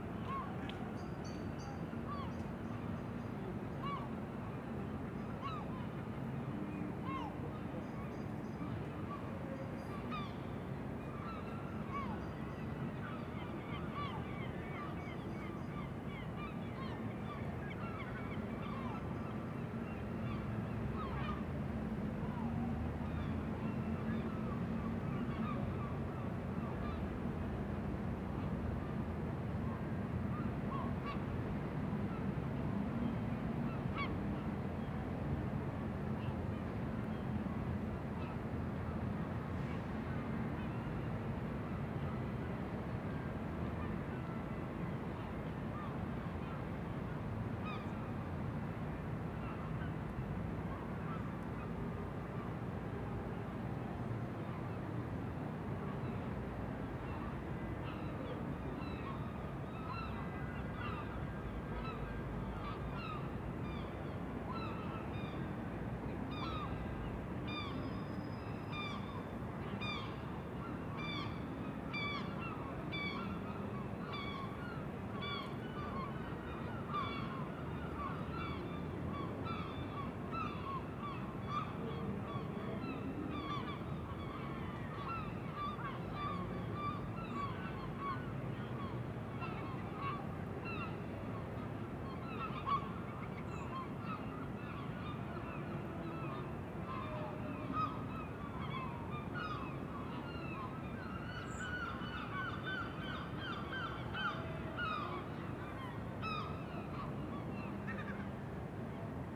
Pavilion Gardens, New Road, Brighton, The City of Brighton and Hove, UK - Seagulls traffic palm tree
The songs of the seagulls during their evening congregation over the Royal Pavilion. The occasional rattle that can be heard to the left comes from the leaves of a nearby palm tree.
31 March 2015